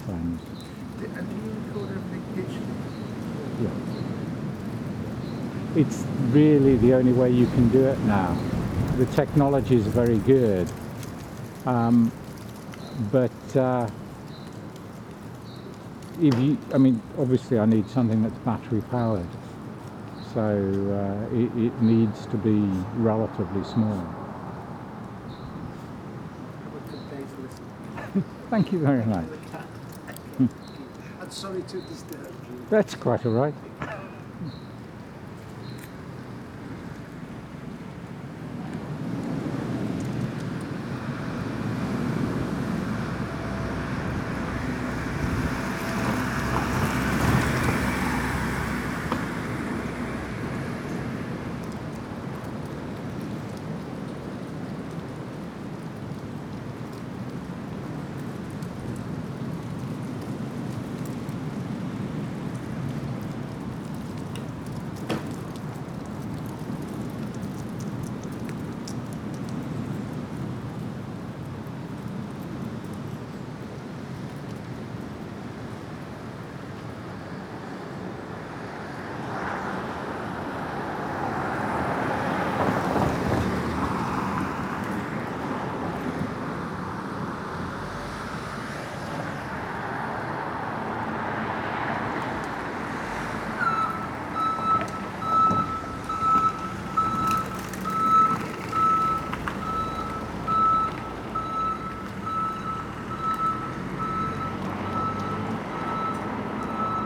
The Poplars High Street Elmfield Road North Avenue
A slate
slipped from a ridge
lies in the garage gutter
A man with two dogs
curious
stops to talk
The song of the reversing ambulance
echoes along the avenue
Marks on the window frame
beneath the eaves
possibility of a nest